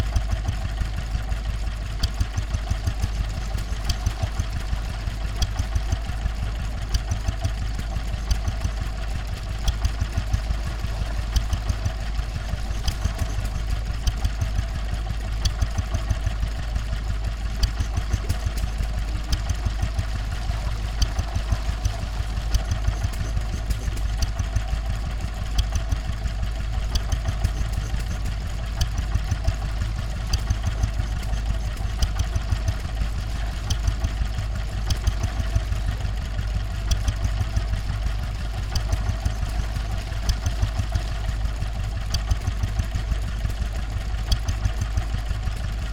Woodcote Steam Rally Oxford Rd, Reading, UK - Old Petrol Water Pump at Woodcote Steam Rally
An old petrol-driven water pump. Sony M10 homemade primo array.
South East, England, UK, 14 July 2019, 15:34